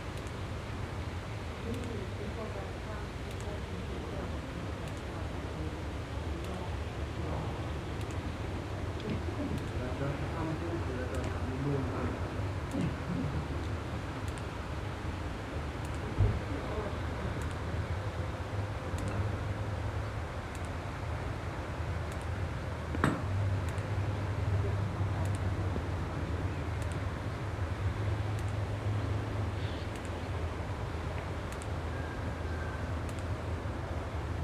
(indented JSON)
{
  "title": "Clervaux, Luxemburg - Eselborn, golf facility, driving range",
  "date": "2012-08-06 14:40:00",
  "description": "Auf der driving range der Golfanlage.\nDas Geräusch der Abschläge mit verschiedenen Schlägern. Im Hintergrund Gespräche einer älteren Dame mit ihrem Golflehrer. Windbewegungen auf dem offenen, abschüssigen Feld.\nAt the riving range of the golf course. The sounds of swings with different bats. In the distance an older woman talking with ther golf teacher. Wind movements on the steep, open field.",
  "latitude": "50.05",
  "longitude": "6.01",
  "altitude": "448",
  "timezone": "Europe/Luxembourg"
}